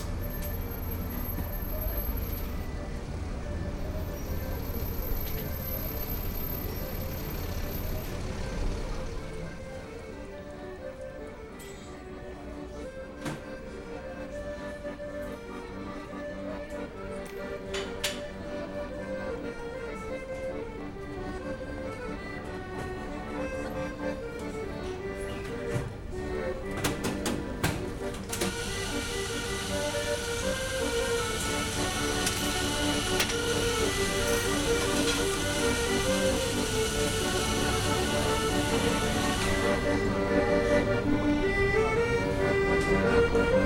A soundscape piece made of field recordings in the area, encompassing the subway station, the ferry boat harbour, the street market, the cafes...It goes from downtown Lisbon to Principe Real